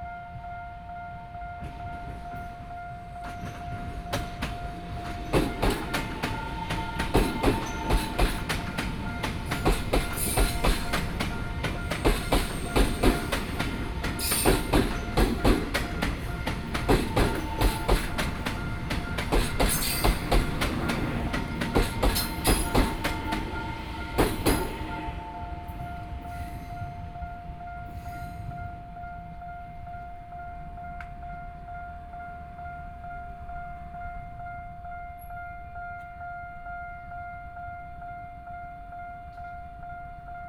Deyang Rd., Jiaoxi Township - In the railway level crossing
Traffic Sound, In the railway level crossing, Trains traveling through, Small village
Sony PCM D50+ Soundman OKM II